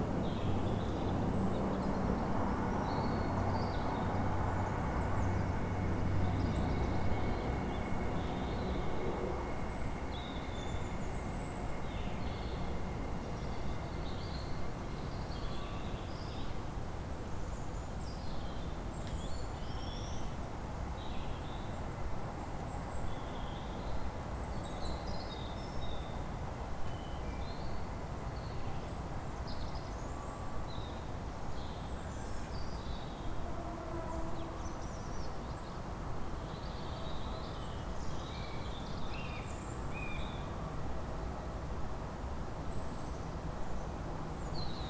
Cofton Hackett, Birmingham, UK - Lickey Hills (inside)
Recorded inside Lickey Hills Country Park with a Zoom H4n.